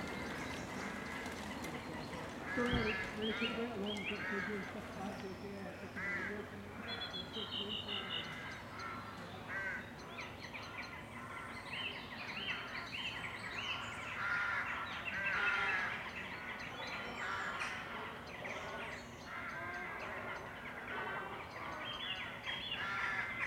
Friston Forest, Cuckmere Valley, East Sussex, UK - Evening crows
Crows and other birds squawking in Friston Forest.
(zoom H4n internal mics)